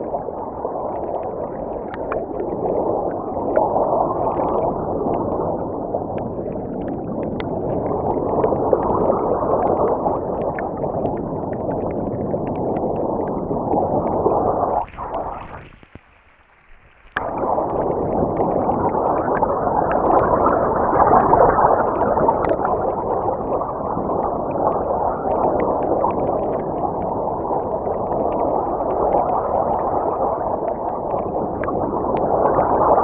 Wały Jagiellońskie, Gdańsk, Polska - ikm piknik 4
Nagranie dokonano podczas pikniku realizowanego przez Instytut Kultury Miejskiej
Gdańsk, Poland, 11 August, 15:15